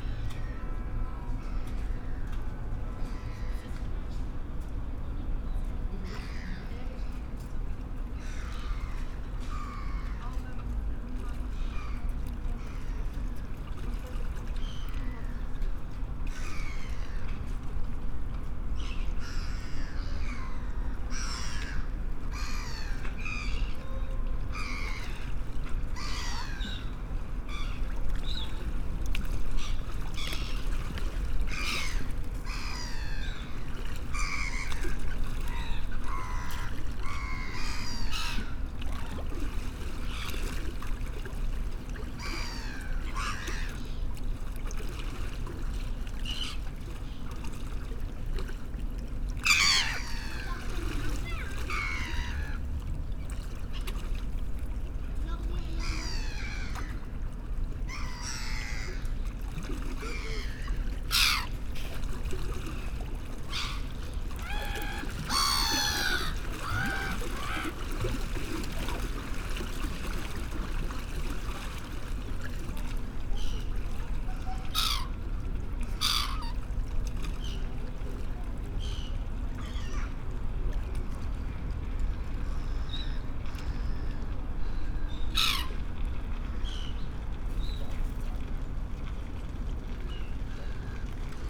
Haldenstrasse, Luzern, Schweiz - Seepromenade Vierwaldstättersee Luzern
Sunday Morning, Vierwaldstättersee Promenade